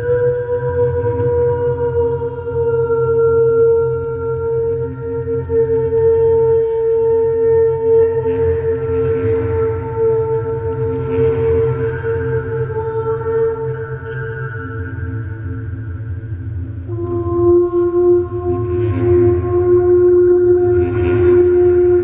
Kirche am Tempelhofer Feld
Innenraum mit Stimme+Bassklarinette (wanco)